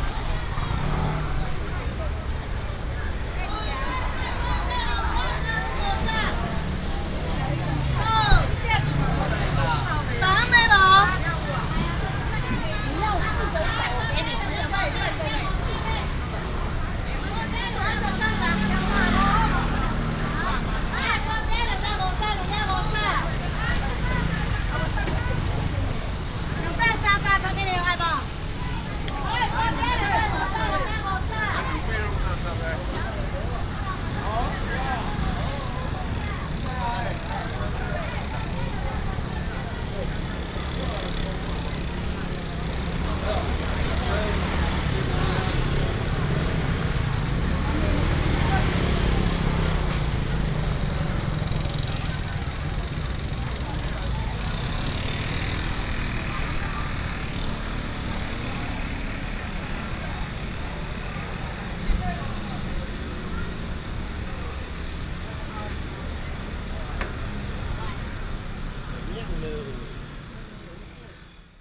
豐原果菜批發市場, Taichung City - Vegetables and fruits wholesale market
Walking in the Vegetables and fruits wholesale market, Binaural recordings, Sony PCM D100+ Soundman OKM II